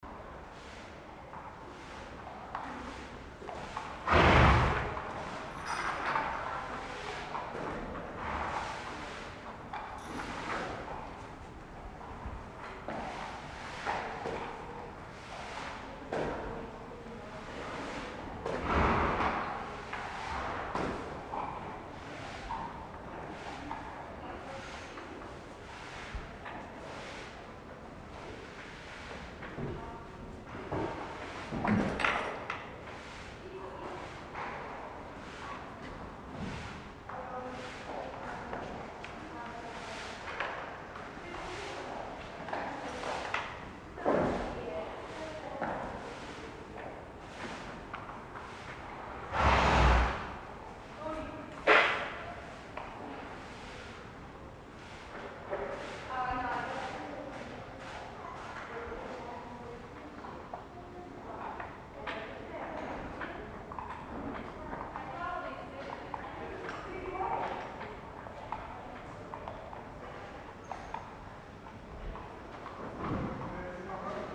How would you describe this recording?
Inside a horse stable. The sound of the horse hooves on the stone pavement and their snorting. In the distance some horse keepers talking while cleaning the floor and a stable. Hosingen, Pferdestall, In einem Pferdestall. Das Geräusch von Pferdehufen auf dem Steinboden und ihr Schnauben. In der Ferne einige Pferdepfleger, die sich unterhalten, während sie den Boden und einen Stall sauber machen. Hosingen, étable à chevaux, A l’intérieur d’une étable à chevaux. Le bruit des sabots des chevaux sur le sol en pierre et leur hennissement. Un peu plus loin, des palefreniers discutent en nettoyant le sol et une étable.